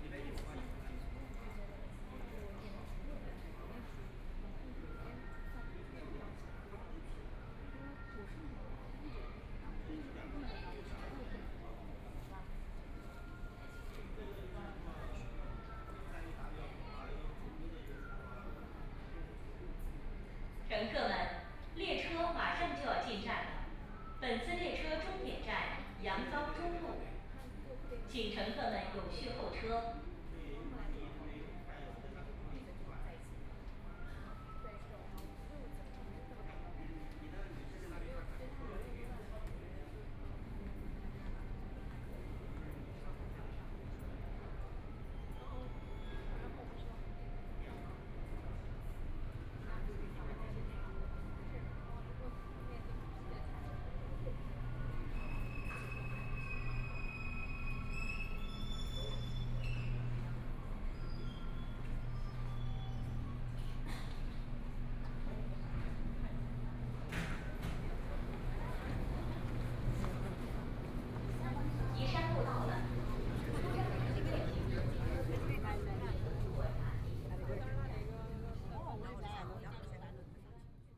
Waiting on the platform, Messages broadcast station, Train arrived, Binaural recording, Zoom H6+ Soundman OKM II
Shanghai, China, November 2013